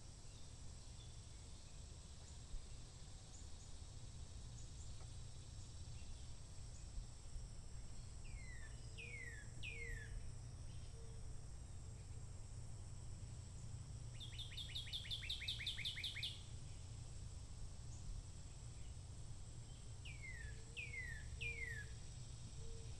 Saugerties, NY - Along the trail to Saugerties Lighthouse
Morning ambience recorded in the woods near the mouth of the Esopus Creek, on the trail out to Saugerties Lighthouse on the Hudson River.